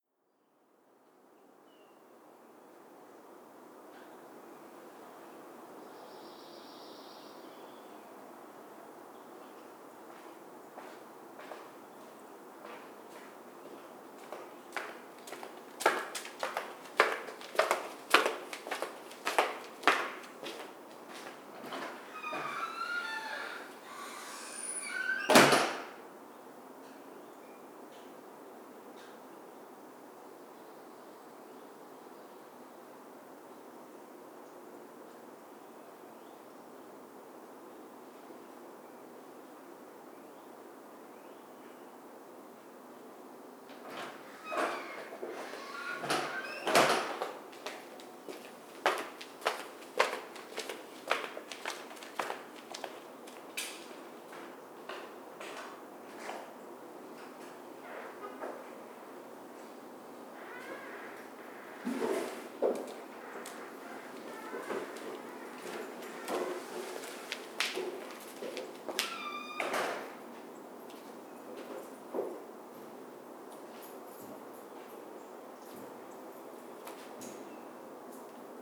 Suffex Green Ln NW, Atlanta, GA, USA - Entrance Hall Ambience
A recording made under a set of stairs in the entrance hall of an apartment complex. You can hear people walking past the recorder, the slamming of doors, keys jingling, etc. The recording was made with the onboard stereo mics of a Tascam Dr-22WL, a mini tripod and a "dead cat" windscreen. A low cut was applied to cut out the rumble of footsteps and nearby traffic.